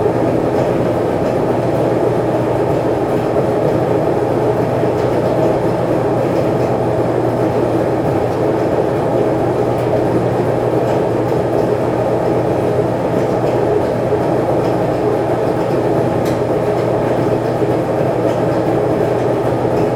New Residence Hall, The College of New Jersey, Pennington Road, Ewing Township, NJ, USA - Laundry Room
This was recorded inside the basement laundry room. There is also a lot of noise from the HVAC system.